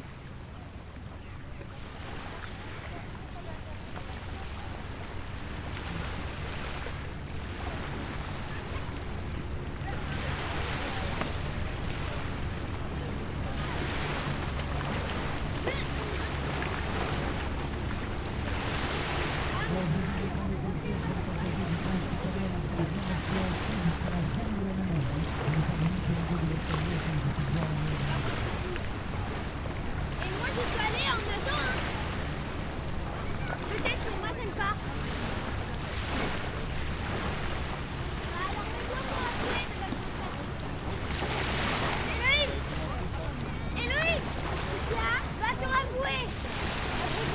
Les marines 1 "espace médical, Grosseto-Prugna, France - Plage de Porticcio
Beach Sound
Capture / ZOOMH6
July 2022